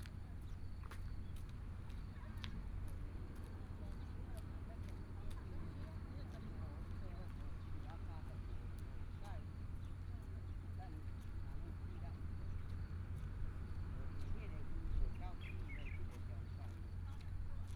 南濱公園, Hualien City - at Waterfront Park
Birdsong, Morning at Waterfront Park, Morning people are walking and jogging
Binaural recordings